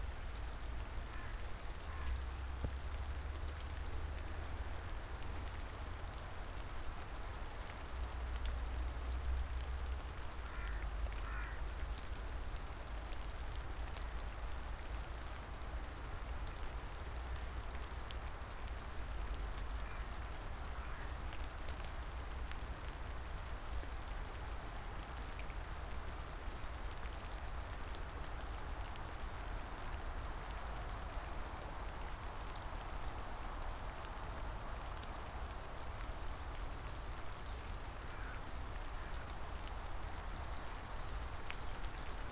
old tree, river Drava, Loka - rain
Starše, Slovenia, 22 February 2015